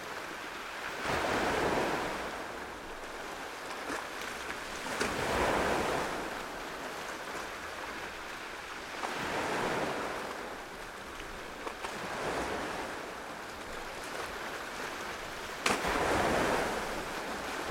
Kıran Mahallesi, Menteşe/Muğla, Turkey - Waves
Karya Beach Camp, night time, sounds of waves